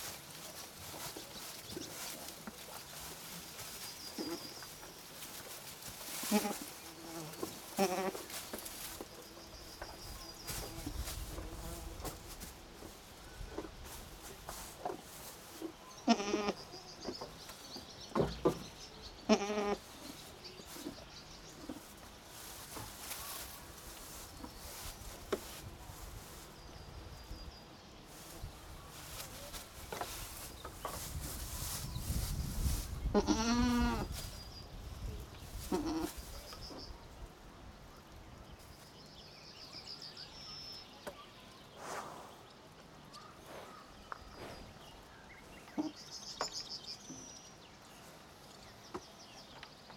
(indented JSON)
{
  "title": "Võnnu Parish, Tartu County, Estonia - Animal farm, goats",
  "date": "2013-05-31 11:11:00",
  "description": "visiting the animal farm with the kids",
  "latitude": "58.31",
  "longitude": "27.08",
  "altitude": "47",
  "timezone": "Europe/Tallinn"
}